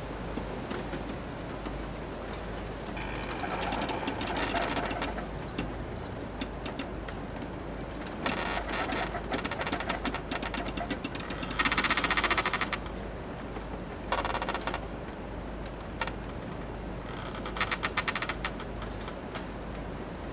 chapelle bethleem ile de la réunion
forêt de bambou gros plan
Reunion, 28 July 2010, 16:53